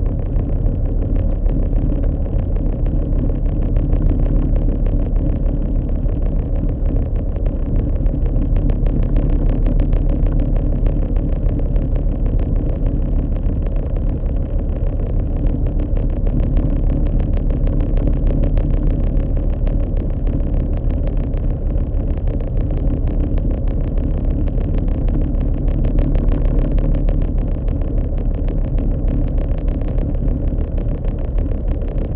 Recorded with two JrF contact microphones (c-series) to a Tascam DR-680.